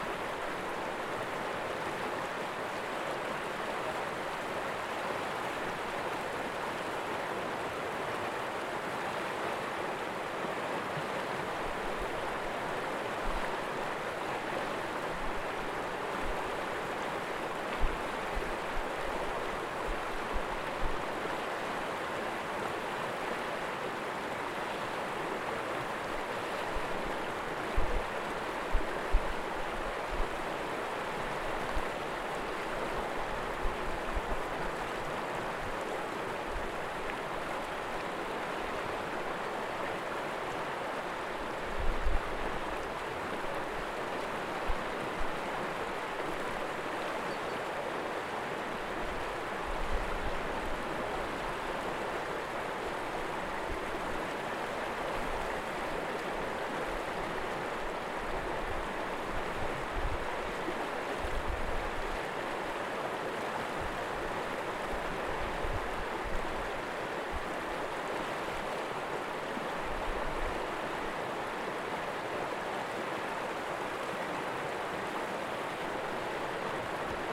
Zollstraße, Neuenburg am Rhein, Deutschland - Rheinplätchern
Plätchern des Rheins kurz vor der Grenze zu Frankreich
Neuenburg am Rhein, Germany, 6 May, 14:43